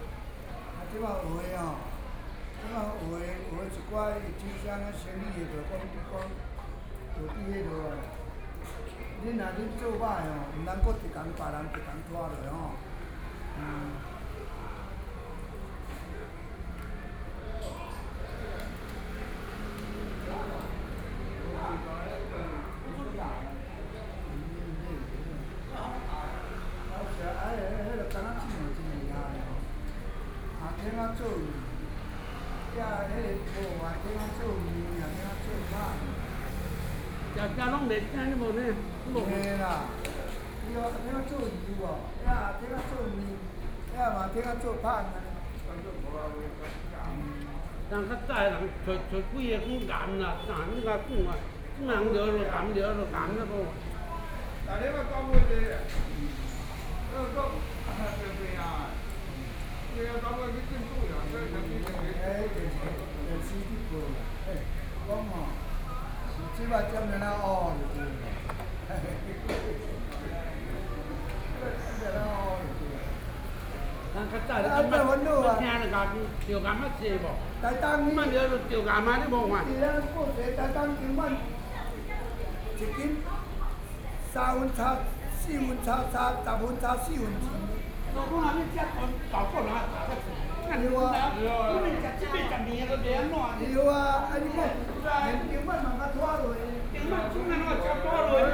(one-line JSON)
{"title": "Nanfang-ao, Yilan county - In the temple", "date": "2013-11-07 11:43:00", "description": "In the temple, 're Chatting while eating old people, Zoom H4n+ Soundman OKM II", "latitude": "24.58", "longitude": "121.86", "altitude": "11", "timezone": "Asia/Taipei"}